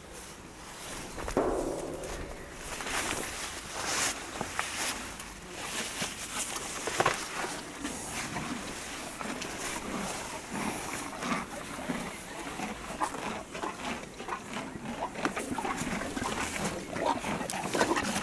Montagnole, France - Horses eating
Coming back from the mine, we found horses near the car, looking at us. We gave them green grass, it was so good ! Sometimes drinking, sometimes with the flies.